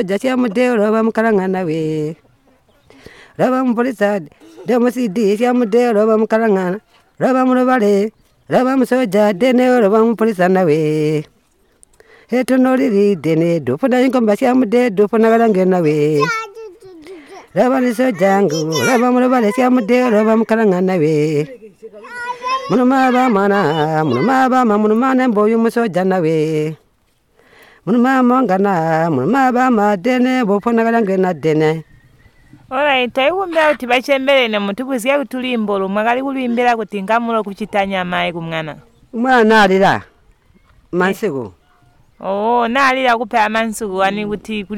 Margaret Munkuli records her mum, Ester Munenge singing a lullaby for a boy (...you can hear the little boy's reactions in the background...). Such songs are created by mothers for their children and usually sung while the mother is working, baby on her back, in the fields, fetching water, or working at home. Today the custom is slowly getting lost and it’s mainly the older women who can still sing such songs to please and calm a baby.
a recording from the radio project "Women documenting women stories" with Zubo Trust, a women’s organization in Binga Zimbabwe bringing women together for self-empowerment.
Zimbabwe